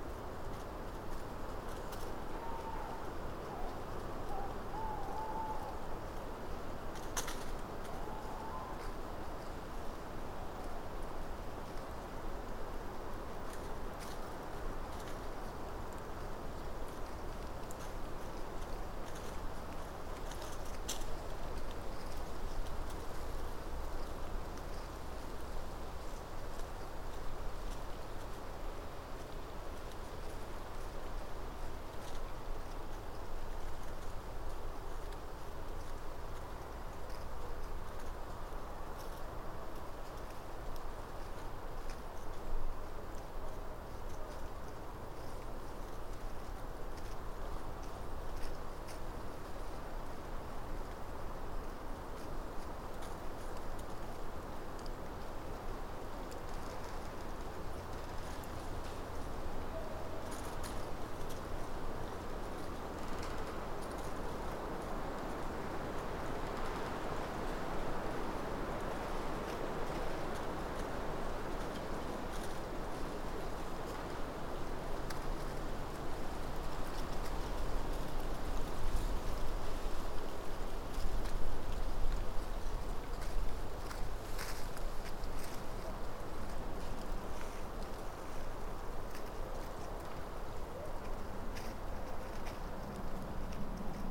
Goring Heath, UK - Birchen Copse
Late evening recording at Birchen Copse on the edge of Woodcote. The recording is underpinned by the movement of the woodland canopy in the wind, the quiet rumbling drone of traffic on the A4074, trains on the Reading to Oxford mainline and planes high overhead. Piercing this are the rustles of small animals nearby, the creaking of trees in the breeze and an owl further into the woodland. Recorded using a spaced pair of Sennheiser 8020s at head height on an SD788T.
Reading, UK, 9 April 2017, 21:50